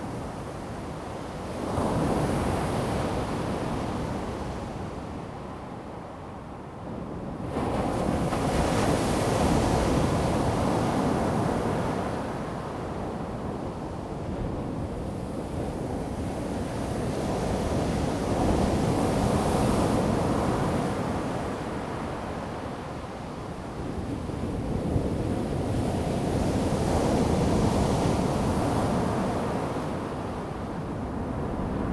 河溝尾, 太麻里鄉 Taitung County - the waves
At the beach, Sound of the waves
Zoom H2n MS+XY
14 March 2018, 13:16